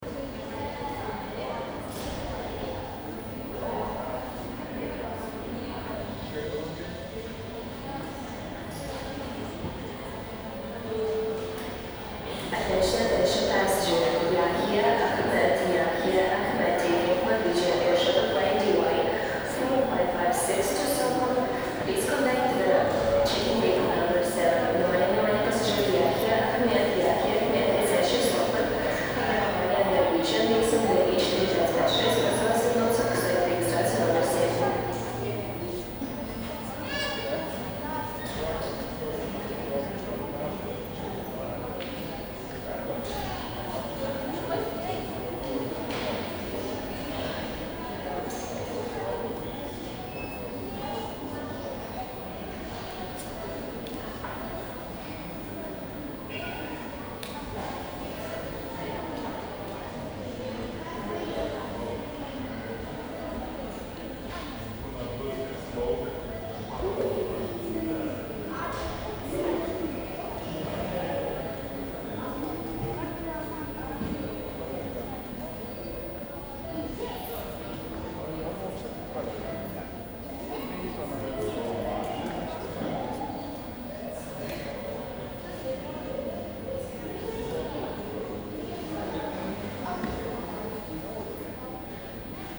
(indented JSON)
{
  "title": "Vilnius Airport, Rodūnios kl., Vilnius, Lithuania - Vilnius Airport terminal",
  "date": "2018-08-09 10:42:00",
  "description": "Basic airport terminal noise, people walking with baggage, talking. Airport announcements.\nRecorded with Zoom H2n, 2CH, handheld.",
  "latitude": "54.64",
  "longitude": "25.28",
  "altitude": "190",
  "timezone": "Europe/Vilnius"
}